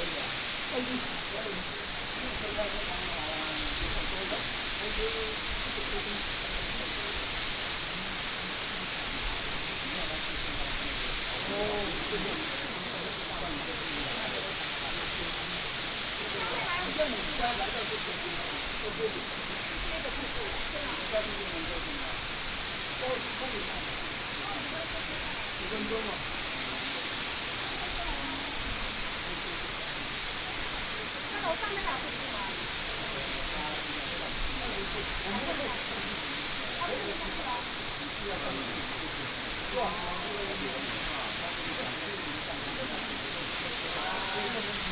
五峰旗瀑布, Jiaoxi Township, Yilan County - waterfall
waterfall, Tourists
Binaural recordings
Sony PCM D100+ Soundman OKM II